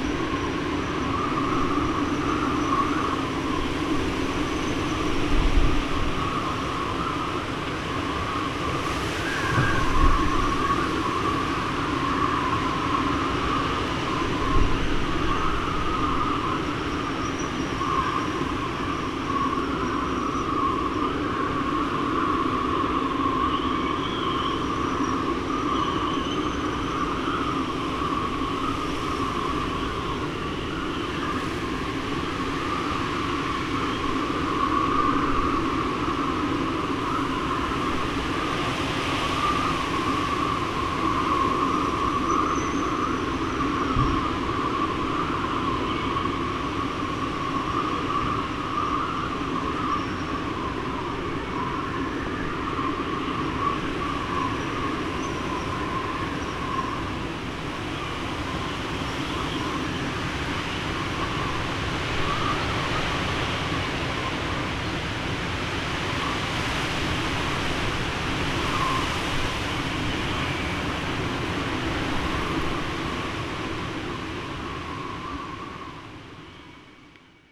Hafen von Kloster, Insel Hiddensee, Germany - Windspiel am Hafen
Wind in den Masten und Rahen der Boote und in den nahegelegenen Büschen. Mono-Aufnahme mit Windschutz.
Vorpommern-Rügen, Mecklenburg-Vorpommern, Deutschland